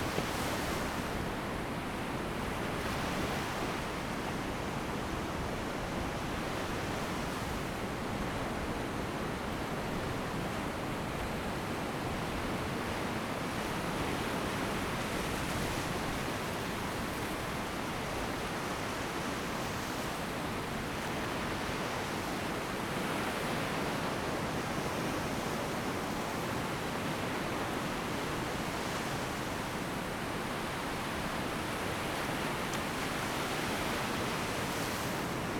東港村, Zhuangwei Township, Yilan County - On the beach
Sound of the waves, River to the sea, On the beach
Zoom H2n